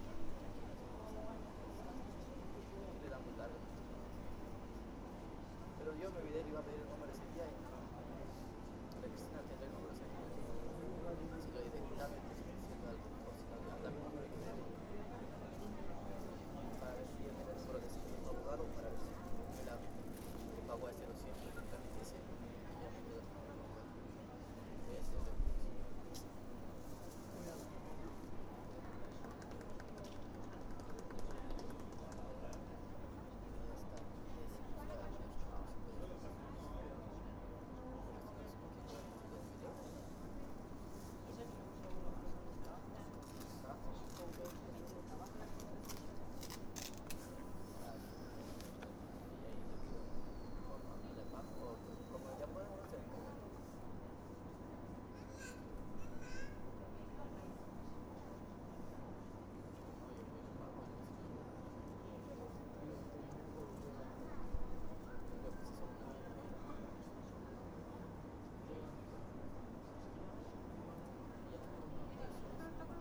FGC Catalunya Station
Train station; short distance service. Lunchtime on a Saturday.
Barcelona, 22 January 2011, 14:30